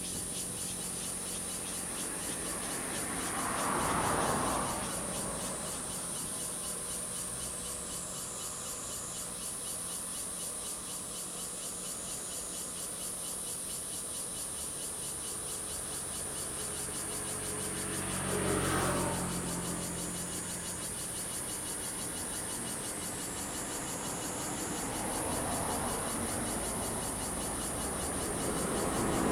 Cicadas, Traffic Sound, Dogs barking
Zoom H2n MS +XY